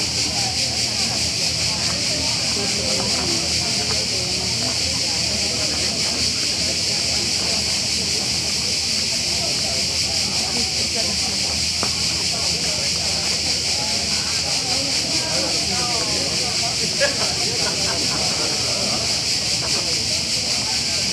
Viale Trento, Venezia VE, Italien - Venice Biennale - entrance area
At the entrance area of the Venice Biennale 2022 - the sound of cicades and the voices of international visitors waitingin line at the tills to receive their tickets.
international soundscapes and art enviroments